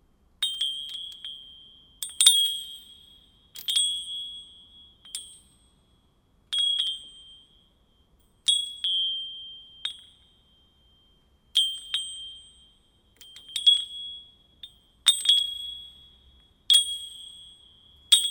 Seraing, Belgium - Playing with chains
Into an abandoned factory, I'm plating with chains as a simple and rustic music instrument. Workers were repairing train axles into this place, it explains why there's a lot of chains.
29 October 2017, ~09:00